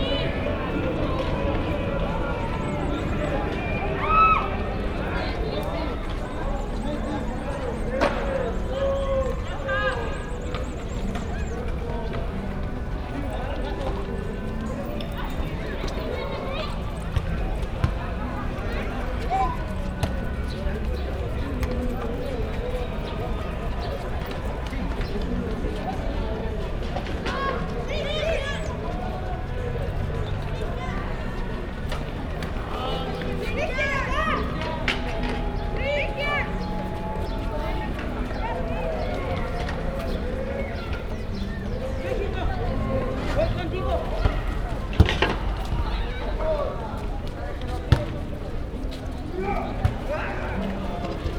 Av México s/n, Hipódromo, Cuauhtémoc, Ciudad de México, CDMX, Mexiko - Parque México
During our(katrinem and I) longer stay in Mexico City, we often visited this park
24 April 2016, 14:42